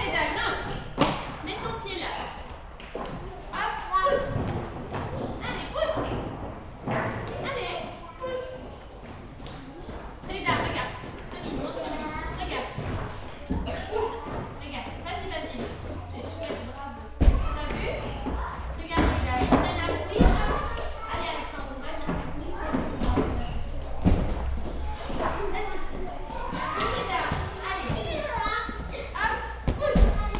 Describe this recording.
Psychomotor education with very young child (3-4 years). They have to climb, to jump on pillows and run in hoops. It's difficult for them !